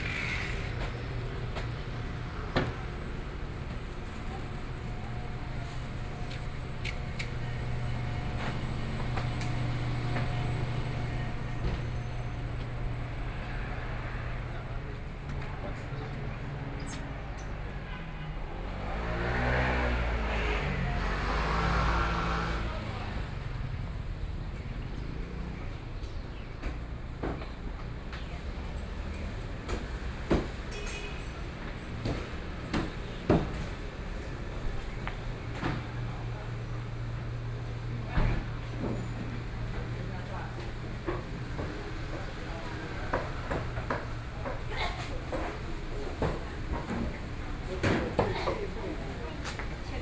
{
  "title": "中山區行仁里, Taipei City - walking in the market",
  "date": "2014-02-27 07:14:00",
  "description": "walking in the market, Traffic Sound, Walking south direction\nBinaural recordings",
  "latitude": "25.07",
  "longitude": "121.54",
  "timezone": "Asia/Taipei"
}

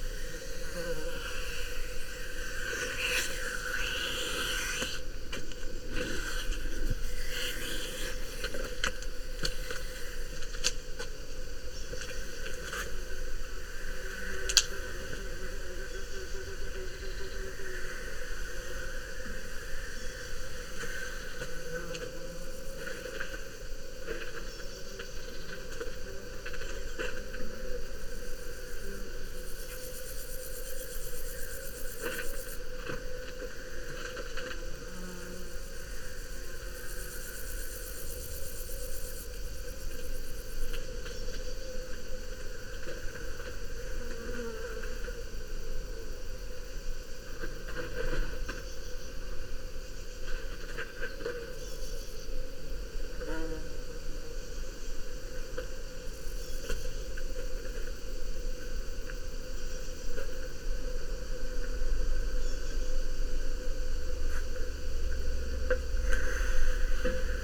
{
  "title": "quarry, Marušići, Croatia - void voices - stony chambers of exploitation - stone block",
  "date": "2013-07-19 15:33:00",
  "description": "sounds of stone, wind, touch, broken reflector, birds, cicadas ...",
  "latitude": "45.41",
  "longitude": "13.74",
  "altitude": "269",
  "timezone": "Europe/Zagreb"
}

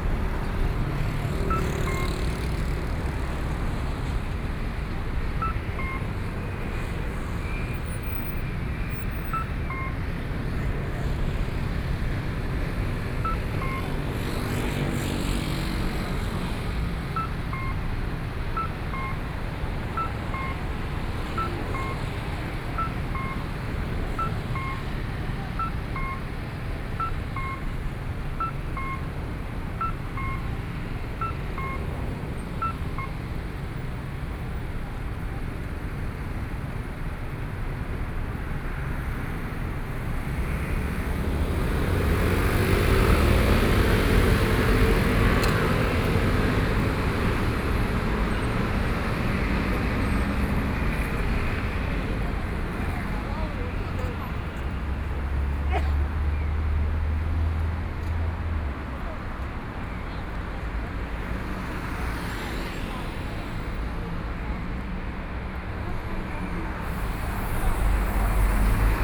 {
  "title": "Sec., Neihu Rd., Neihu Dist. - Traffic Sound",
  "date": "2014-03-15 17:53:00",
  "description": "Walking on the road, Traffic Sound\nBinaural recordings",
  "latitude": "25.08",
  "longitude": "121.58",
  "timezone": "Asia/Taipei"
}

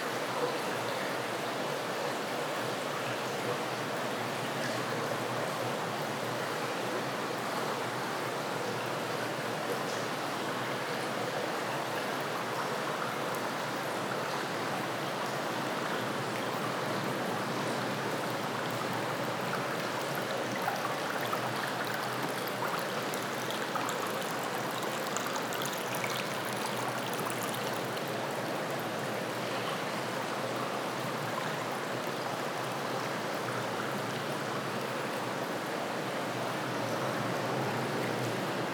{
  "title": "Ponta Do Sol, Portugal - water inside tunnel",
  "date": "2012-12-01 02:15:00",
  "description": "water dripping and flowing inside a tunnel, reveberation and resonance, church audio binaurals with zoom h4n",
  "latitude": "32.68",
  "longitude": "-17.11",
  "altitude": "43",
  "timezone": "Atlantic/Madeira"
}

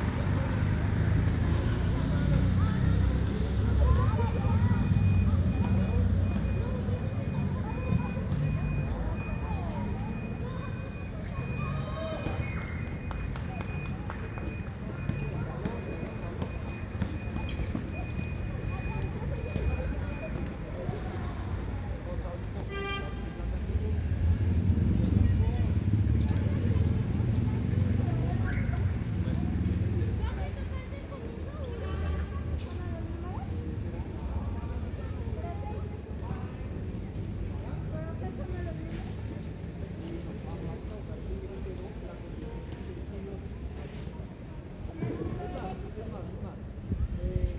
{
  "title": "Cl. 4 Sur, Bogotá, Colombia - park at 3pm",
  "date": "2021-05-27 15:30:00",
  "description": "Children and adolescents playing soccer while vehicles circulate in the surroundings",
  "latitude": "4.59",
  "longitude": "-74.09",
  "altitude": "2577",
  "timezone": "America/Bogota"
}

